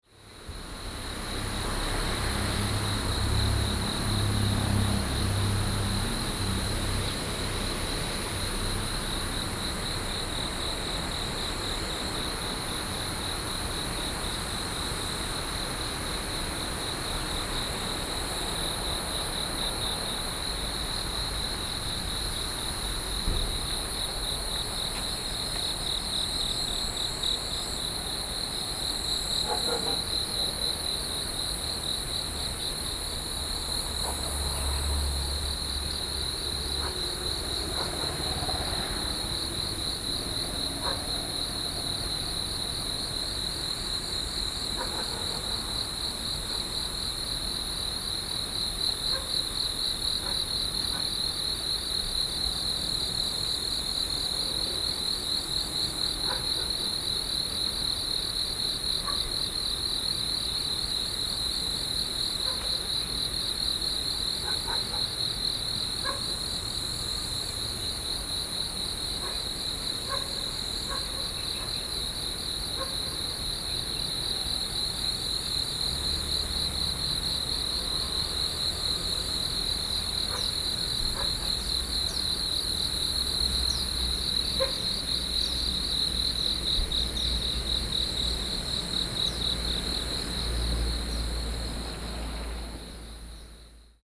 Shilin District, Taipei - Roadside
Cicadas, Dogs barking, Traffic noise, The sound of water, Sony PCM D50 + Soundman OKM II